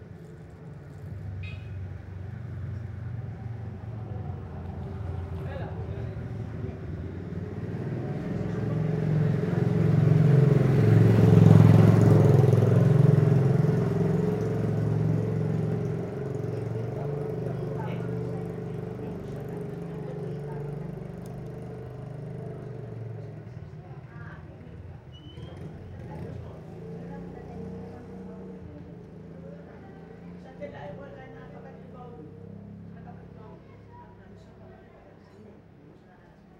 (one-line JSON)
{"title": "Fourni, Griechenland - Seitengasse", "date": "2003-05-09 17:30:00", "description": "Am Abend in einer Seitengasse. Die Insel ist Autofrei.\nMai 2003", "latitude": "37.58", "longitude": "26.48", "altitude": "13", "timezone": "Europe/Athens"}